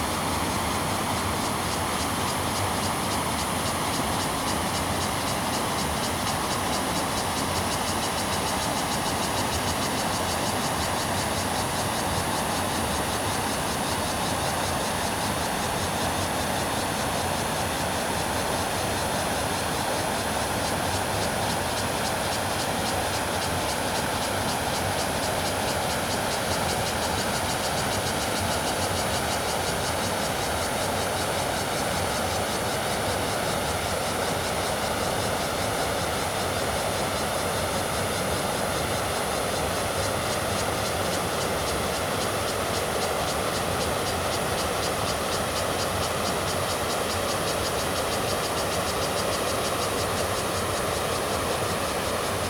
YuMen Gate, 埔里鎮成功里 - Rivers and cicadas
The sound of the stream, Rivers and cicadas, Bridge
Zoom H2n MS+XY +Spatial audio
Nantou County, Taiwan